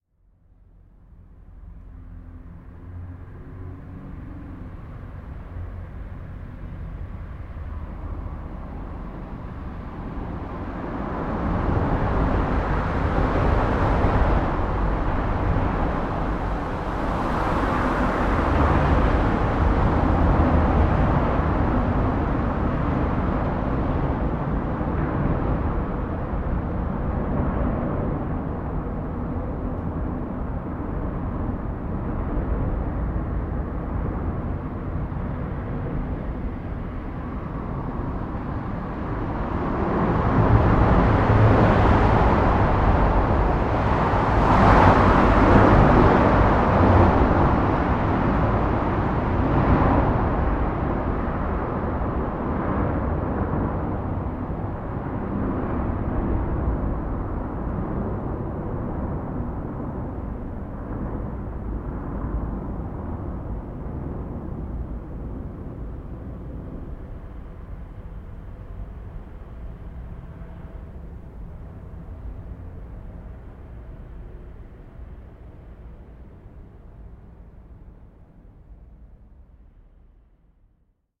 Traffic in the tunnel underneath the TKS steel works at Duisburg Bruckhausen
Duisburg, Germany, 6 July 2011